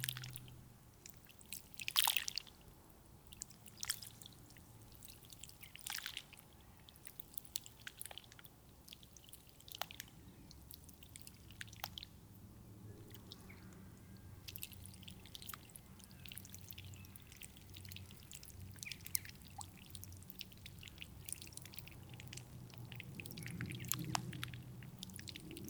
Hénouville, France - High tide
The high tide on the Seine river is called Mascaret. It arrives on the river like a big wave. On the mascaret, every beach reacts differently. Here the beach blows with strange soft sounds.